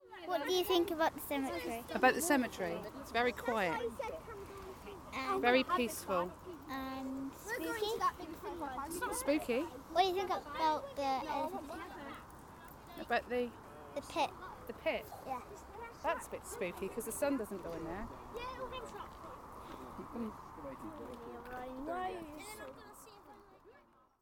Efford Walk Two: Elephants graveyard is spooky - Elephants graveyard is spooky
UK, 24 September 2010, 16:56